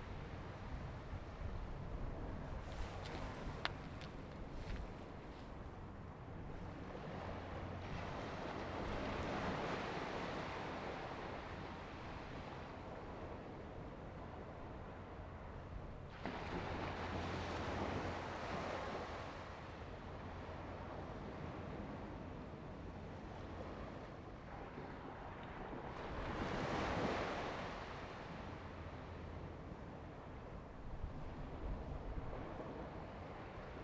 溪邊海水浴場, Jinhu Township - At the beach
At the beach, Sound of the waves
Zoom H2n MS+XY
福建省, Mainland - Taiwan Border, 3 November